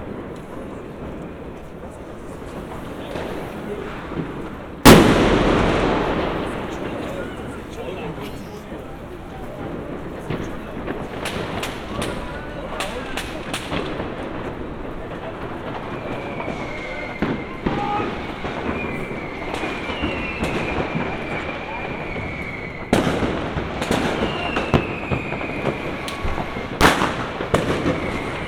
Rheinstraße, Berlin, Allemagne - New Year Eve Firework
Street fireworks at the passage of midnight on New Year Eve in the district of Friedenau, Berlin.
Recorded with Roland R-07 + Roland CS-10EM (binaural in-ear microphones)
Deutschland, 31 December 2021, 23:59